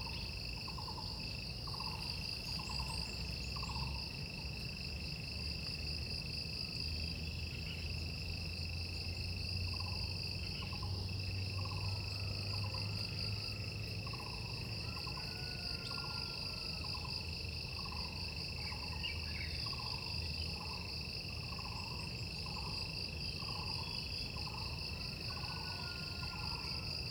中路坑, 桃米里 - Sound of insects and birds
In the woods, Sound of insects, Bird sounds
Zoom H2n MS+XY
6 May 2016, 07:21